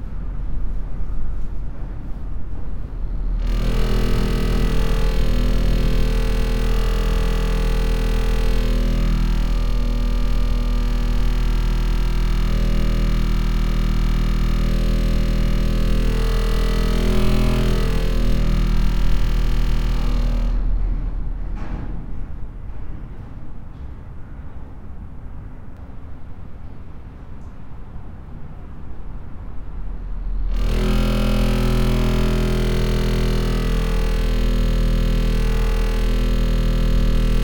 In an abandoned coke plant, the wind is playing with a metal plate, which vibrates at every gust. This noise is only made by the wind.